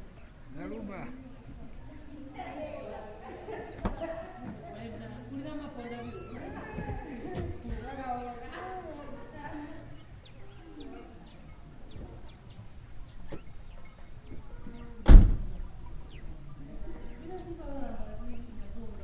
Sikalenge, Binga, Zimbabwe - sounds of the bush...
… I wanted to capture the peaceful sounds of the bush in Sikalenge before our meeting with the Women’s Forum started… but it turned out not all that peaceful…